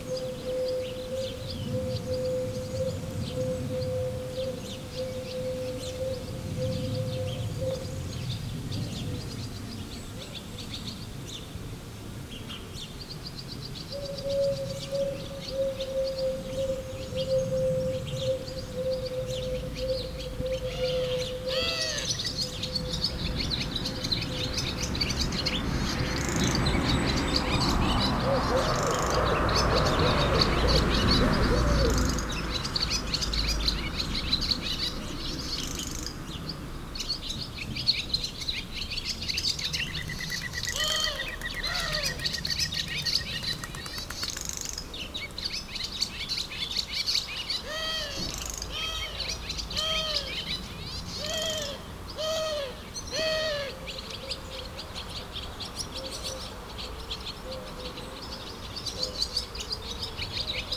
Chamesson, France - Turtledoves
In this small village of the Burgundy area, we are in a very old wash-house. Just near, turtledoves are singing a very throbbing vocal. Around, swallows are moving fast and singing on an electric wire.
31 July